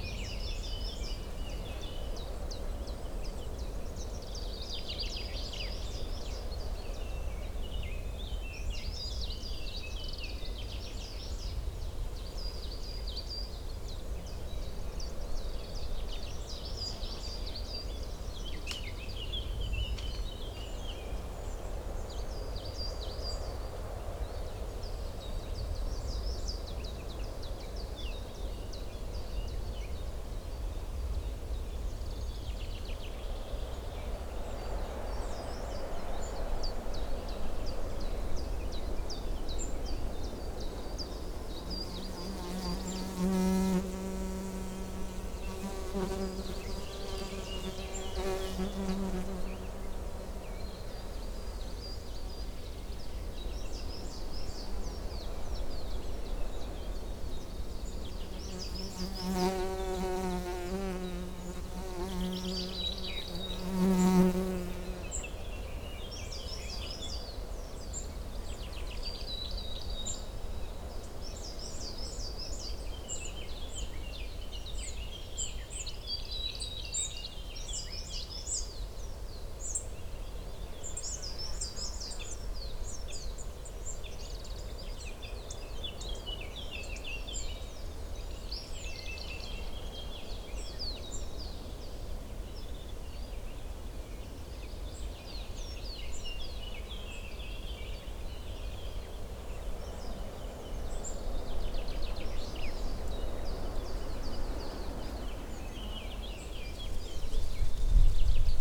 Two meters from the top of the hill. You can hear wind and birds. Microphones where placed on a bush. Mic: Lom Usi Pro.